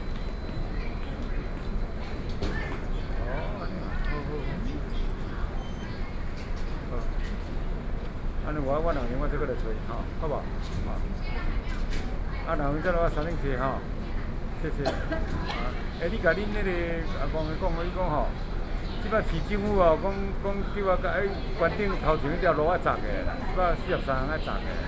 Taipei Main Station, Taiwan - waiting areas
Taiwan High Speed Rail, waiting areas, Sony PCM D50 + Soundman OKM II